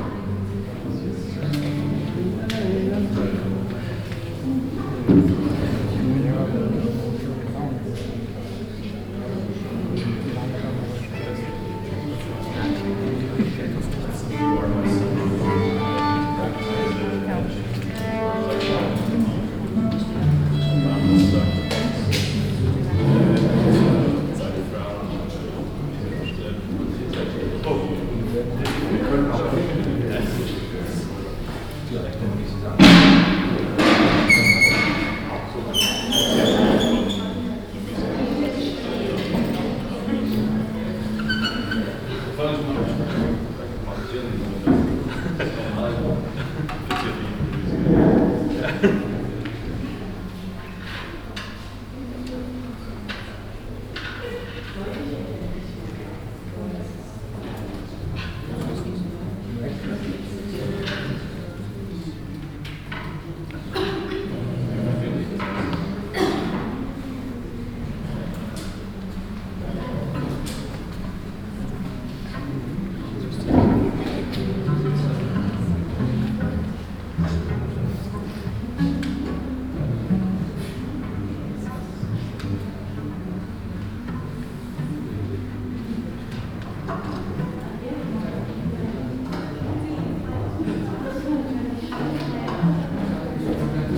Bergisch Gladbach, Deutschland - Bensberg, Technologie Park, exhibition opening
Inside a bureau or office building of the Technologie Park during an exhibition opening of local artists. The sound of the room and the audience before the opening concert - an anouncement.
soundmap nrw - social ambiences, art places and topographic field recordings